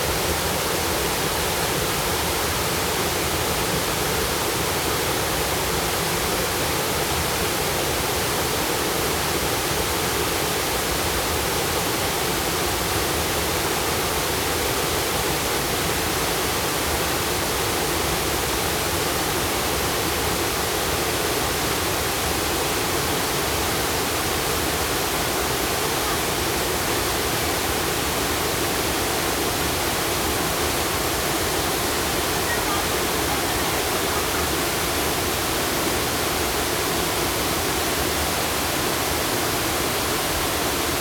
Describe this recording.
waterfall, Zoom H2n MS+ XY+Spatial audio